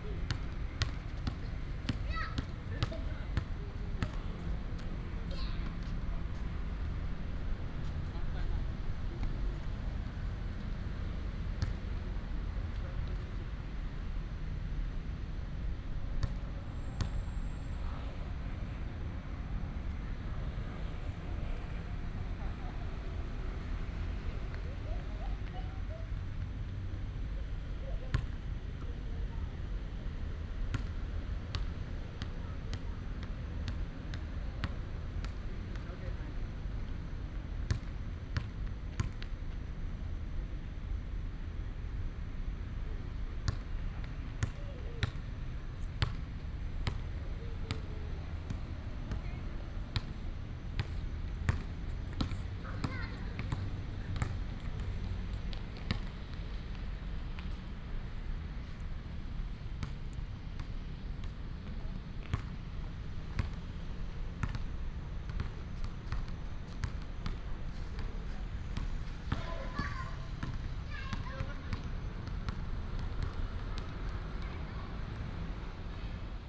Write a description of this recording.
in the park, Childrens play area, traffic sound, Father and children playing basketball, Binaural recordings, Sony PCM D100+ Soundman OKM II